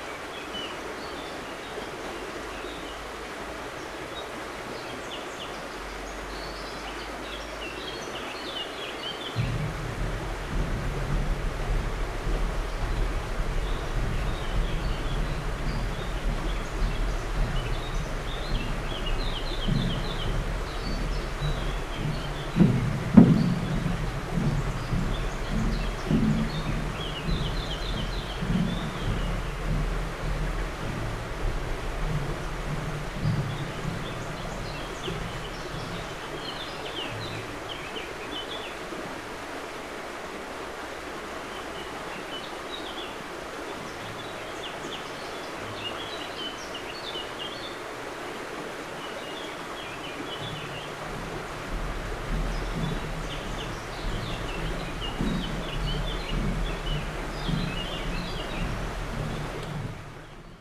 La passerelle toute neuve en aluminium qui permet aux piétons de traverser le Sierroz, seules les fauvettes chantent encore en cette saison.